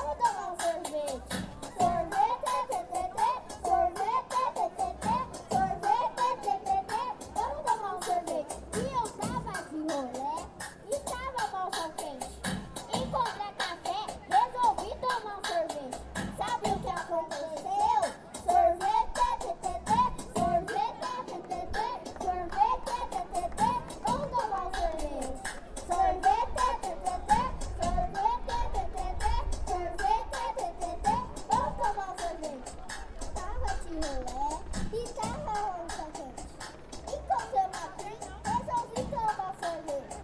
Av. Paulista - Bela Vista, São Paulo - SP, 01310-300, Brasil - Show de crianças (Mc Maqueen e Mc Cafezinho) durante a manifestação Lula Livre
Gravação de campo da paisagem sonora do show de crianças (Mc Maqueen e Mc Cafezinho) durante a manifestação intitulada "Ato da Jornada Lula Livre". Feito com o gravador Tascam DR40, em um ambiente aberto, não controlável, com dezenas de pessoas.